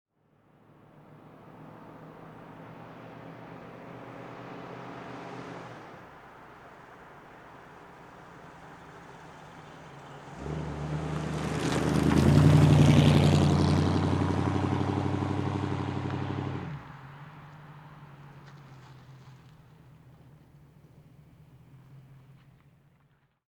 1967 Ford Mustang, Turning Corner, Medium Speed (Neumann 190i, Sound Devices 722)
AR, USA